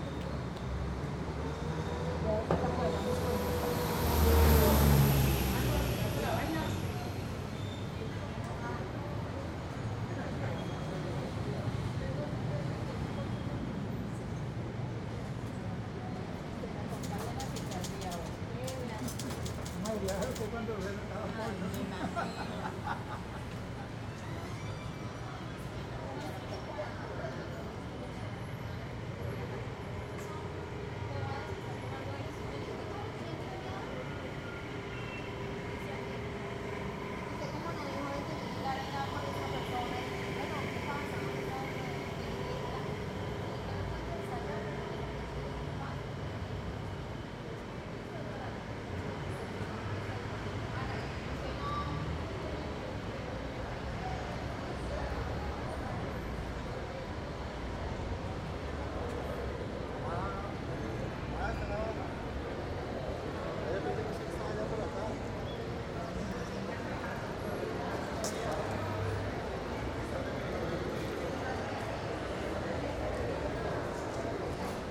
Ibagué, Ibagué, Tolima, Colombia - Ibagué deriva sonora02
Ejercicio de deriva sonora por el centro de Ibagué.
Punto de partida: Concha Acústica
Soundwalk excercise throughout Ibagué's dowtown.
Equipment:
Zoom h2n stereo mics Primo 172.
Technique: XY
November 2014